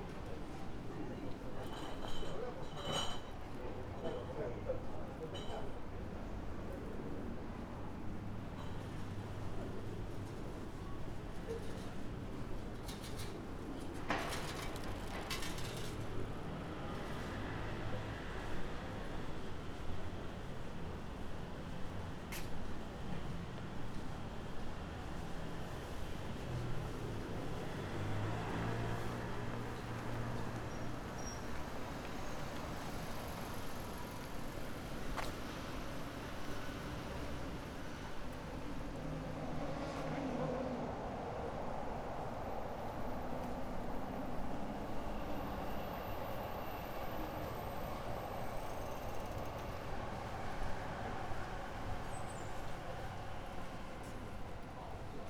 Tokyo, Taito district - sounds of the streets on the way to hotel
sounds of the streets in the evening. restaurants, water drops, phone conversations, push carts...